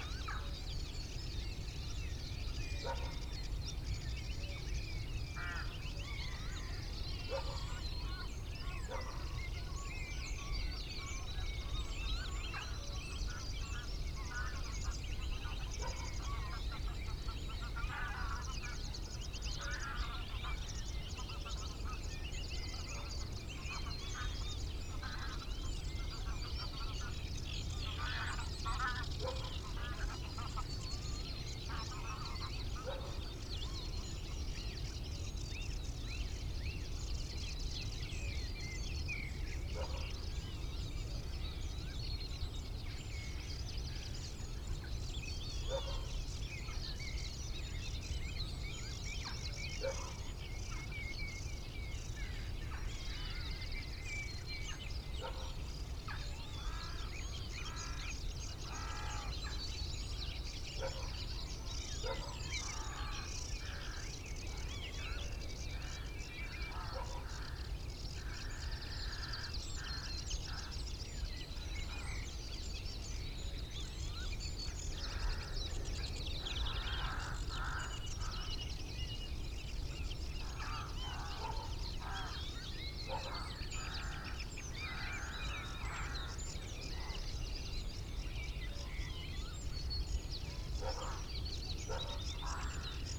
Unnamed Road, Isle of Islay, UK - corn crake ... crex ... crex ... etc ...
Corncrake soundscape ... RSPB Loch Gruinart ... omni mics in a SASS through a pre-amp ... calls and songs from ... sedge warbler ... blackbird ... reed bunting ... song thrush ... cuckoo ... rook ... wren ... lapwing ... greylag geese ... moorhen ... gadwall ... crow ... jackdaw ... and a dog ... not edited or filtered ...
24 May